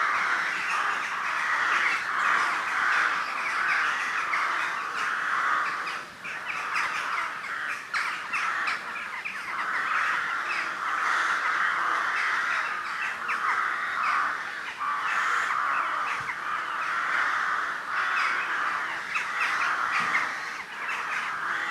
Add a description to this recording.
We were having a barbeque when I heard the Rooks start to call as they gathered together for the night. I'd heard them the day before and really wanted to get a recording so I ran as fast as I could and placed my recorder on the ground beneath the trees. Unfortunately I missed the start of their calling. I can't remember the exact time but it was dusk. Recorded on a Zoom H1.